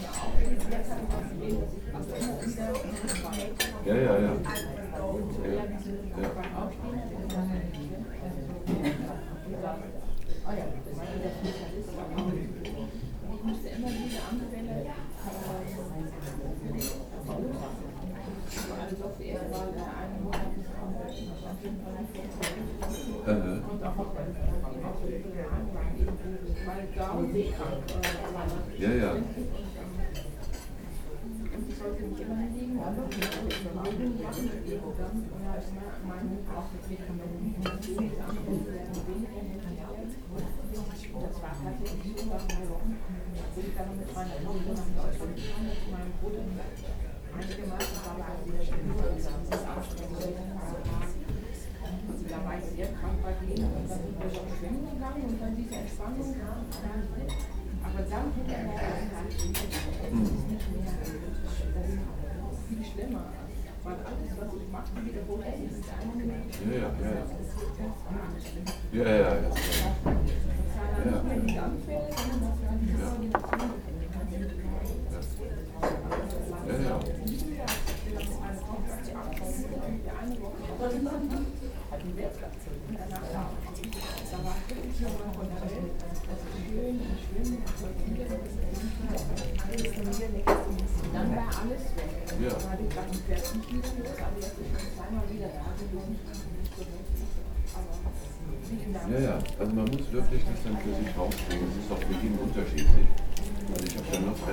lippstadt, lippischer hof, breakfast room
morning time in the hotel breakfast room. a conversation on the table near by
social ambiences/ listen to the people - in & outdoor nearfield recordings